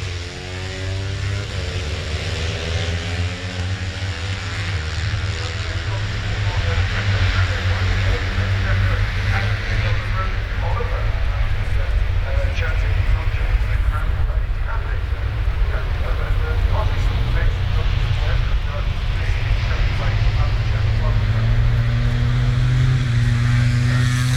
Lillingstone Dayrell with Luffield Abbey, UK - british motorcycle grand prix 2013 ...
moto3 fp2 2013 ...